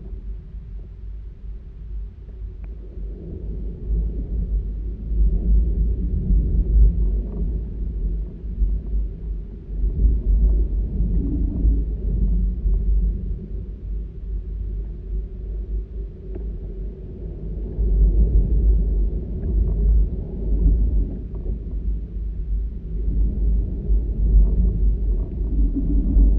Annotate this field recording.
Two JrF contact mics wedged into a dead, but free standing pine, in the 2011 Bastrop forest fire burn area. Recorded using a Marantz PMD661